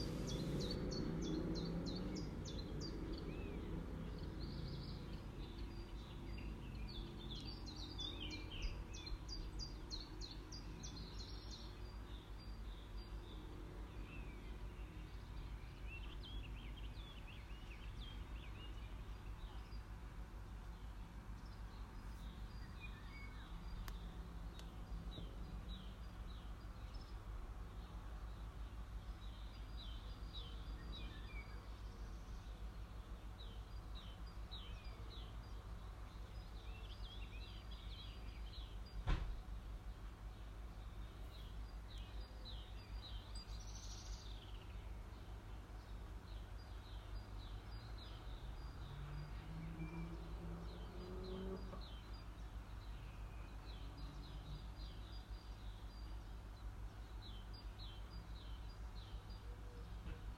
Unnamed Road, Litomyšl, Česko - Outside from inside
Sound of czech birds that I hear every day from a window in my room. Place: Litomyšl